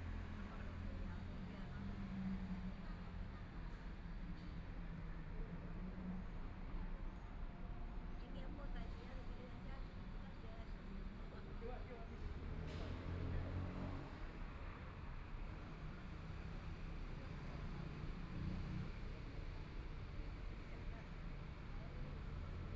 ZhongYuan Park, Taipei City - Elderly chatting
Afternoon sitting in the park, Traffic Sound, Sunny weather, Community-based park, Elderly chatting
Binaural recordings, Please turn up the volume a little
Zoom H4n+ Soundman OKM II